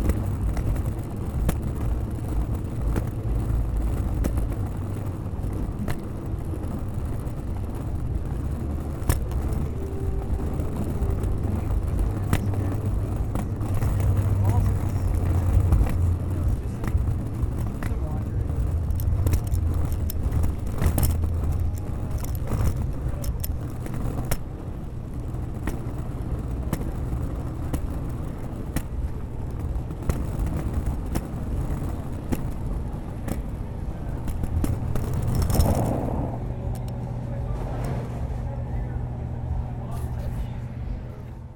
Red River St, Austin, TX, USA - USA Luggage Bag Drag #10 (Night)
Recorded as part of the 'Put The Needle On The Record' project by Laurence Colbert in 2019.
Texas, United States, 1 October 2019